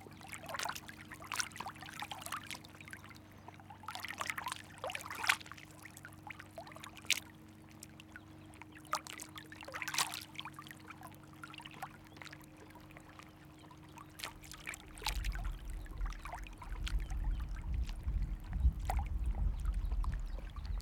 São Miguel-Azores-Portugal, 7 Cidades lake, water movements on stairs
2 November, ~13:00